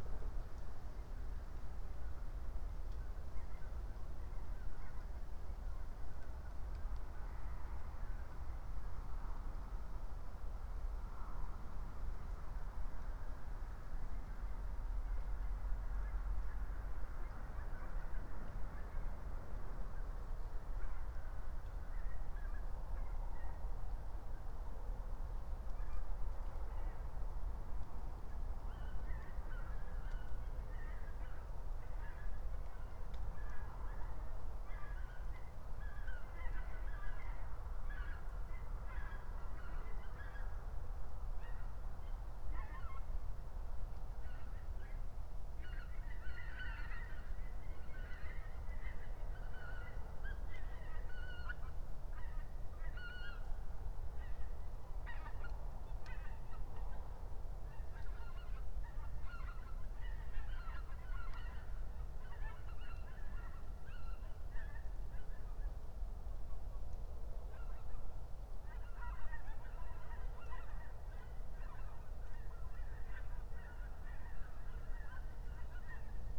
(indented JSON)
{
  "title": "Berlin, Buch, Am Sandhaus - forest edge, former Stasi hospital, birds at night",
  "date": "2021-03-04 03:30:00",
  "description": "(remote microphone: AOM5024/ IQAudio/ RasPi Zero/ LTE modem)",
  "latitude": "52.64",
  "longitude": "13.48",
  "altitude": "62",
  "timezone": "Europe/Berlin"
}